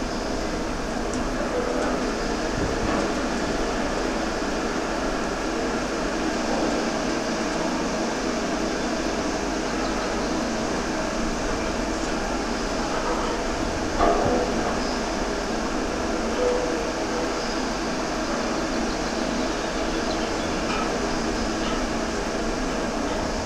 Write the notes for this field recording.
the sounds of the factory resonating through a drainpipe on the corner of the building. recorded with contact mics.